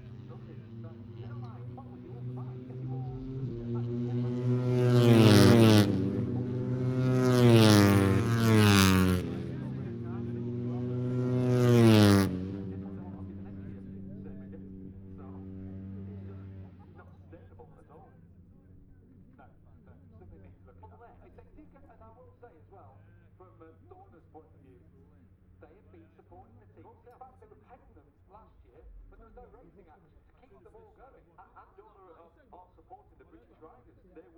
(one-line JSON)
{"title": "Silverstone Circuit, Towcester, UK - british motorcycle grand prix 2021 ... moto three ...", "date": "2021-08-27 13:15:00", "description": "moto three free practice two ... maggotts ... olympus ls 14 integral mics ...", "latitude": "52.07", "longitude": "-1.01", "altitude": "158", "timezone": "Europe/London"}